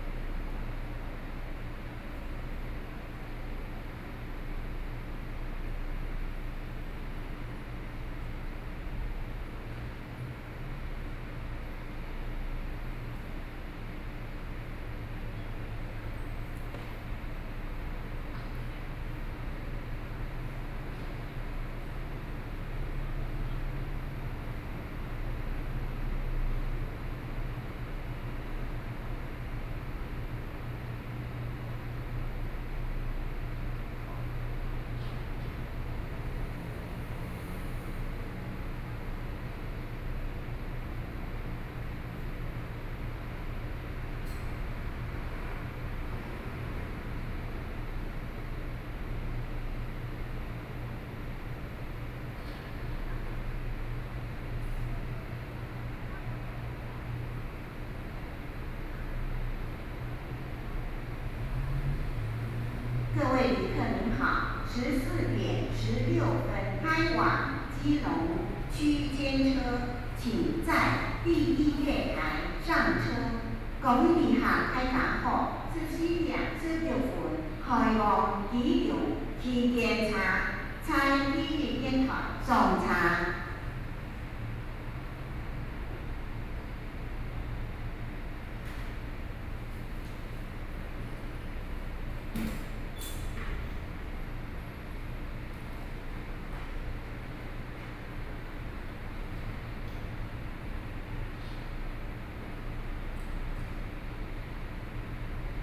Station hall, Sony PCM D50+ Soundman OKM II